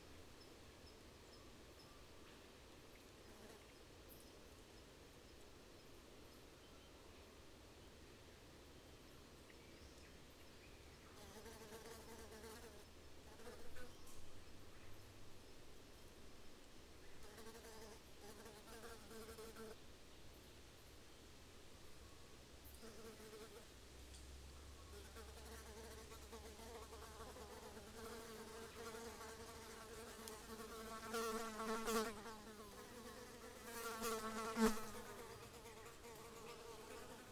Blue Mountains National Park, NSW, Australia - Leaving my microphones in the Jamison Valley (Early Autumn)
The first 40 minutes or so of a 12 and a half hour recording in the Jamison Valley. It only got to around 13C in the night so I was wrong about the temperature. And the valley was full of thick mist from around 9pm to 7am so I don't think the (almost) full moon would of made much of a difference.
Also, I did actually record Wallabies munching on the undergrowth, no squeaking trees and Tawny Frogmouth's at the same time though!
Recorded with a pair of AT4022's into a Tascam DR-680.